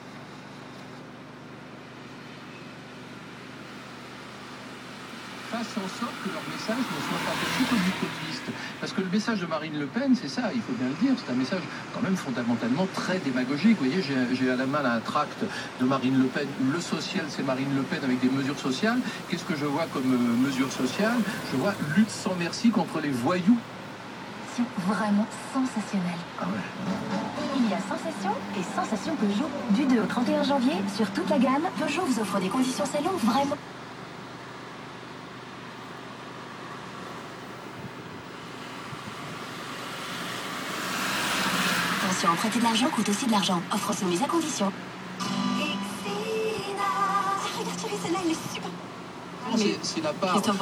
{"date": "2014-01-09 18:49:00", "description": "Wait/Listen #2 (09.01.2014/18:49/Rue Notre-Dame/Luxembourg)", "latitude": "49.61", "longitude": "6.13", "altitude": "304", "timezone": "Europe/Luxembourg"}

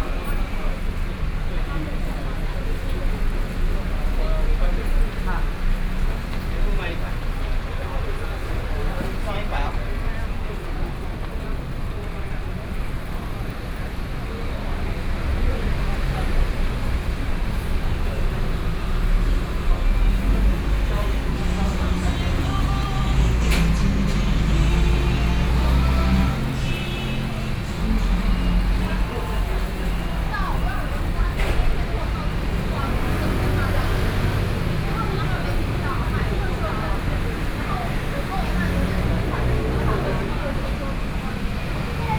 {"title": "Sec., Yonghe Rd., Yonghe Dist., New Taipei City - soundwalk", "date": "2013-08-30 21:12:00", "description": "walking in the street, Sony PCM D50 + Soundman OKM II", "latitude": "25.01", "longitude": "121.51", "altitude": "18", "timezone": "Asia/Taipei"}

